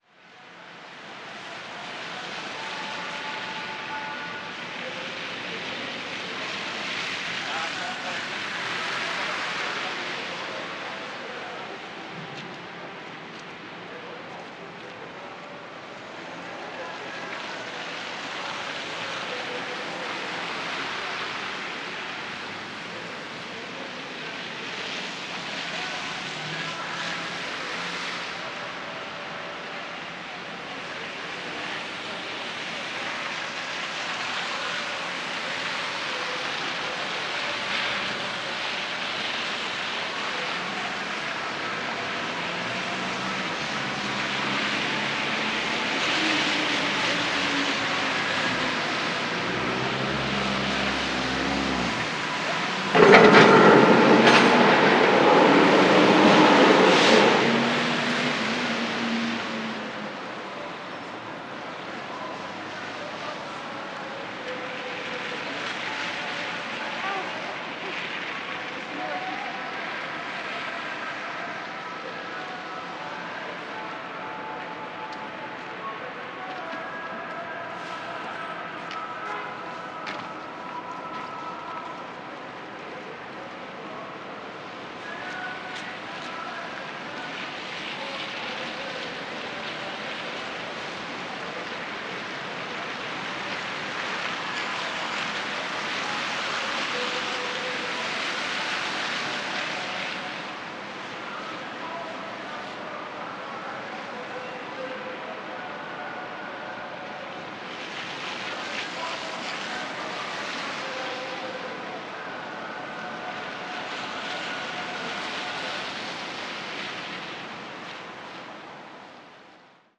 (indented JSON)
{
  "title": "Rue Sainte-Catherine O, Montréal, QC, Canada - Guy Street",
  "date": "2020-12-30 15:41:00",
  "description": "Recording at the corner of Guy St and Saint-Catherine St. City speakers playing music during the holiday period with cars and pedestrians travelling around. A snow removal truck lowers its plow to start scraping off the snow from the streets.",
  "latitude": "45.50",
  "longitude": "-73.58",
  "altitude": "46",
  "timezone": "America/Toronto"
}